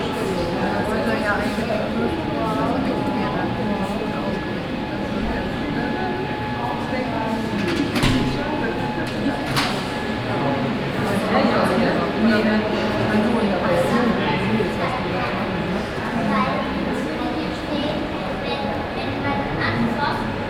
Neuss, Deutschland - museums island hombroich, langen founation, exhibtion hall
Inside the Langen Foundation exhibition hall during the Otto Piene Exhibition "Light and Air" - here the ambience from the upper front hall with audience.
soundmap d - social ambiences, topographic field recordings and art spaces
Neuss, Germany, 9 August